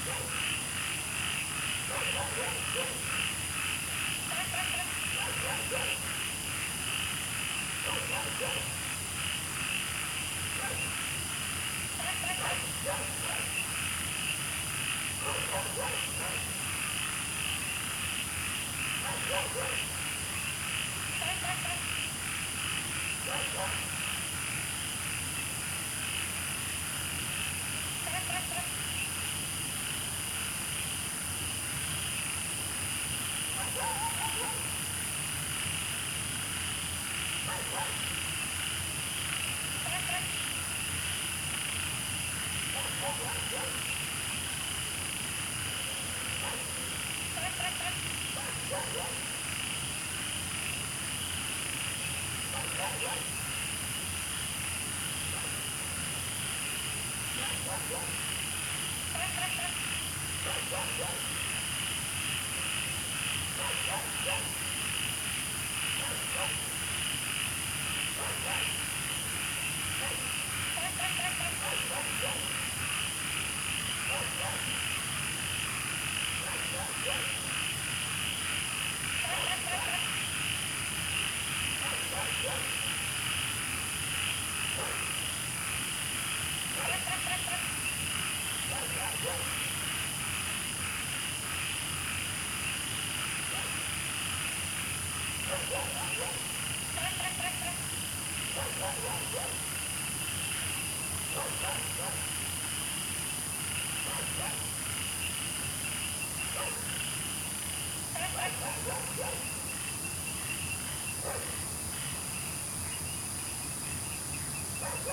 MaoPuKeng Wetland Park, Puli Township - Frogs chirping
Frogs chirping, Insects sounds, Wetland, Dogs barking
Zoom H2n MS+ XY